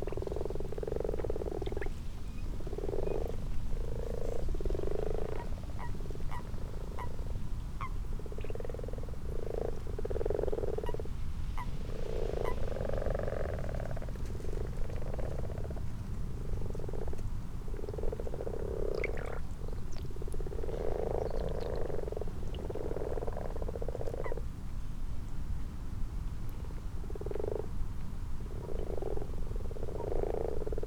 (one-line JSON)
{"title": "Malton, UK - frogs and toads ...", "date": "2022-03-12 23:32:00", "description": "common frogs and common toads ... xlr mics to sass on tripod to zoom h5 ... time edited unattended extended recording ...", "latitude": "54.12", "longitude": "-0.54", "altitude": "77", "timezone": "Europe/London"}